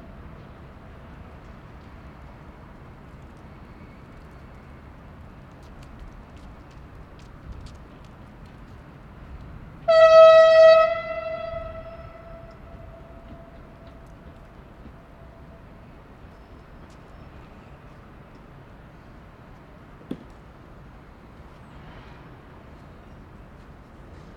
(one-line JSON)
{"title": "Trainyard sounds Klaipeda", "date": "2011-11-25 16:25:00", "description": "engine and horn blasts outside the Klaipeda train station", "latitude": "55.72", "longitude": "21.14", "altitude": "8", "timezone": "Europe/Vilnius"}